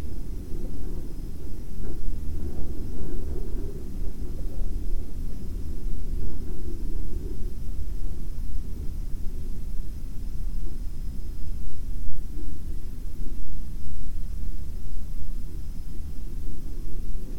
{
  "title": "Hotel a televizní vysílač Ještěd, Liberec, Česko - Ještěd",
  "date": "2022-02-22 22:22:00",
  "description": "The sound of wind coming from the ventilation in the hotel room and the Ještěd transmitter.",
  "latitude": "50.73",
  "longitude": "14.98",
  "altitude": "924",
  "timezone": "Europe/Prague"
}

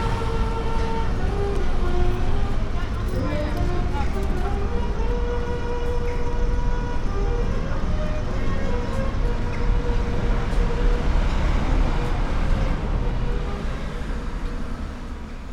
{
  "title": "Buskers, Tewkesbury, Gloucestershire, UK - Buskers",
  "date": "2020-05-15 14:18:00",
  "description": "A singer and a sax player recorded while walking through the narrow shopping precinct in Tewkesbury town centre. 2 x Sennheiser MKH 8020s",
  "latitude": "52.00",
  "longitude": "-2.16",
  "altitude": "18",
  "timezone": "Europe/London"
}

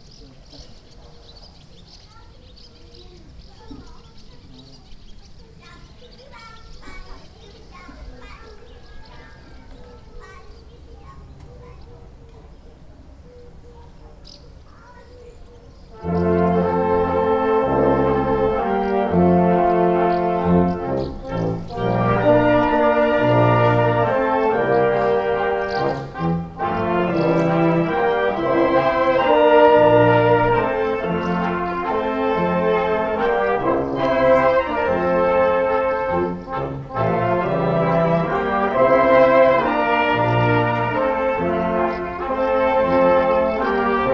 {
  "title": "Funeral at Krásná Hora - Brass band during the funeral ceremony",
  "date": "2013-08-19 12:39:00",
  "description": "Before the funeral procession departed from the church to the graveyard the brass band plays several songs.",
  "latitude": "49.60",
  "longitude": "15.47",
  "altitude": "451",
  "timezone": "Europe/Prague"
}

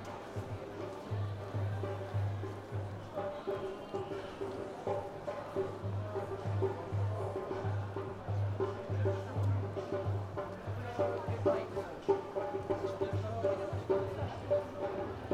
{
  "title": "Stare Miasto, Kraków, Poland - Street Dancing",
  "date": "2011-08-03 20:41:00",
  "latitude": "50.06",
  "longitude": "19.94",
  "altitude": "219",
  "timezone": "Europe/Warsaw"
}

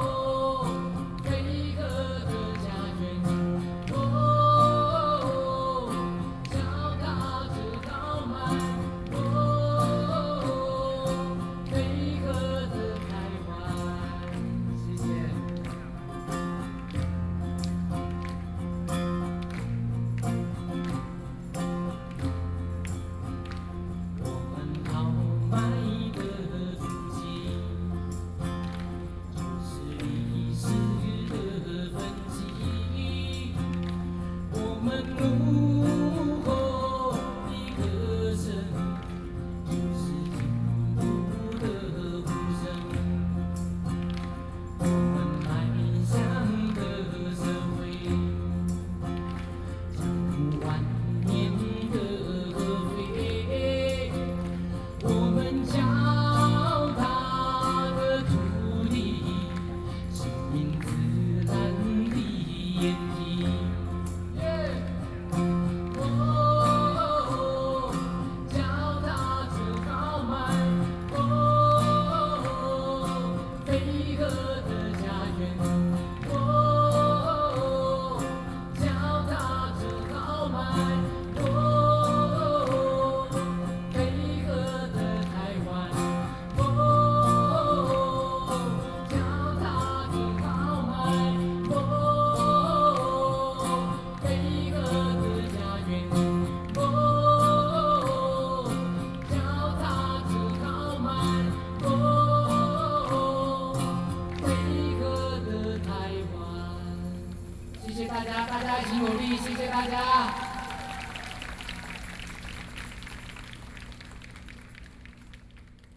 {
  "title": "Zhongzheng, Taipei City, Taiwan - Nuclear protest songs",
  "date": "2013-05-26 19:50:00",
  "description": "Nuclear protest songs, Protest, Hakka singer, Zoom H4n+ Soundman OKM II",
  "latitude": "25.04",
  "longitude": "121.52",
  "altitude": "20",
  "timezone": "Asia/Taipei"
}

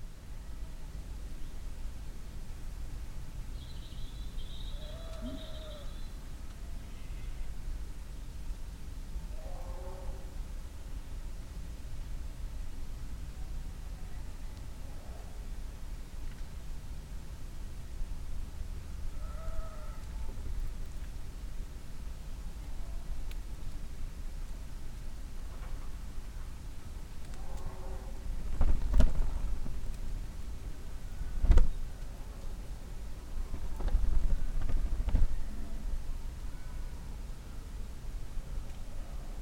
{
  "title": "Wedmore, UK - Oldwood, birdwing",
  "date": "2017-05-07 20:35:00",
  "description": "This is a beautiful little wood near Wedmore. Here in amongst an old building I left my SASS rig (based on Primo EM 172 capsules made by Ian Brady (WSRS)) on a window cill overnight on the evening of International Dawn Chorus Day. I went just before the most glorious sunset with an almost full moon developing.There was a light breeze which gives a background swash and amongst other sounds are the beating wings of a mystery bird.",
  "latitude": "51.21",
  "longitude": "-2.81",
  "altitude": "41",
  "timezone": "Europe/London"
}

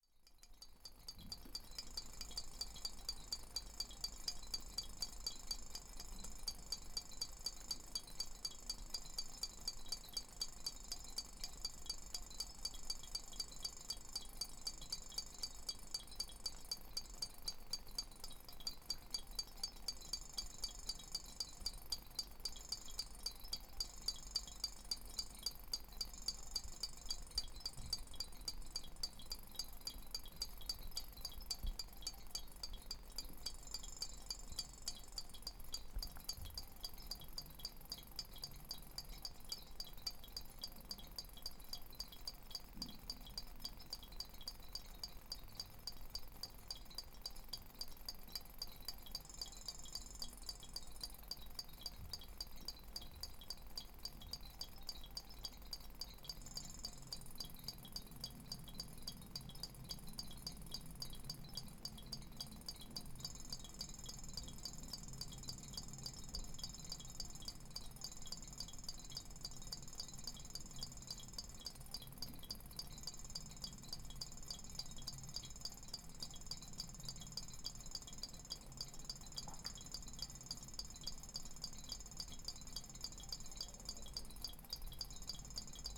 {
  "title": "Poznan, Mateckiego street, kitchen - champagne glass orchestra",
  "date": "2013-01-01 13:16:00",
  "description": "a set of dozen or so champagne glasses, set on a drying rack, drying after washed with hot water. air bubbles making bell like sounds all over the place",
  "latitude": "52.46",
  "longitude": "16.90",
  "altitude": "97",
  "timezone": "Europe/Warsaw"
}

